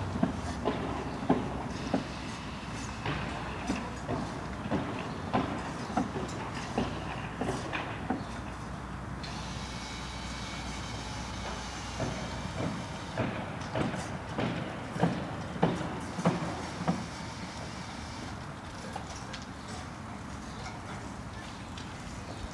nailgun echo at Adam-Klein-Str., Nürnberg/Muggenhof